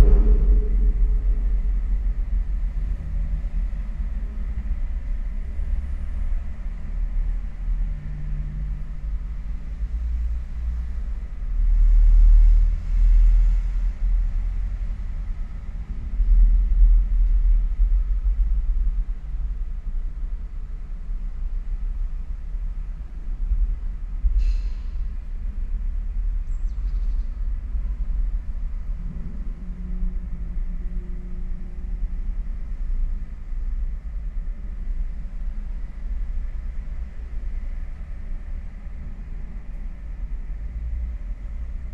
Utena, Lithuania, inside big rainwater pipe
during the reconstruction of one of the main streets they have changed all communications under the street and installed big rainwater pipe. could not resist to get into the pipe and record the chtonic sounds. four channels: two omni mics and two contact ones.